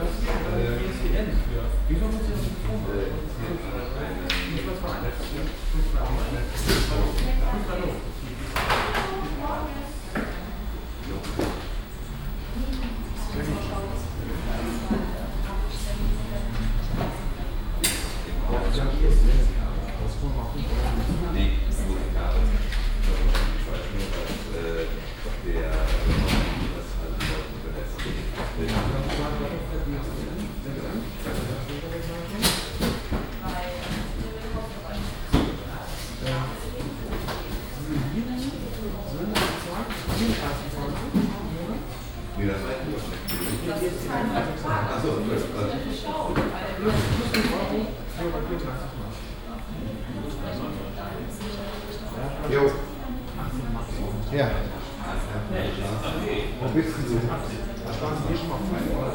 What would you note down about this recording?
atmosphere in a local telephon shop - talks and mobile sounds plus clicks on computer keyboards, soundmap nrw - social ambiences - sound in public spaces - in & outdoor nearfield recordings